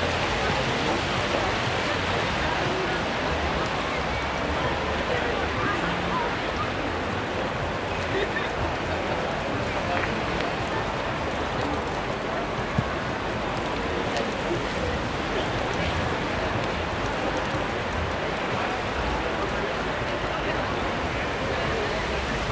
After two years without any Christmas Markets, the city of Belfast decided to organise it again. This recording faces the queue waiting to go inside the market as the rain falls. Recording of a queue waiting, vehicle passing, raindrops falling, wet roads, wet sidewalk, pedestrians, multi-group chatter, "Not wearing masks", metal gate movement, vehicle horn, children talking/yelling, distant music.
Ulster, Northern Ireland, United Kingdom, 2 December